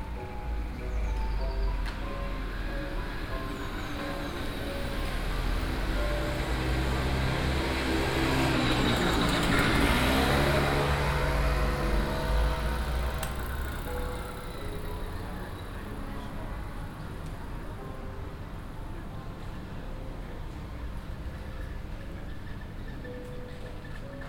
amsterdam, hartenstraat, piano play
listening to piano play that comes out of an open window of a nearby house
international city scapes - social ambiences and topographic field recordings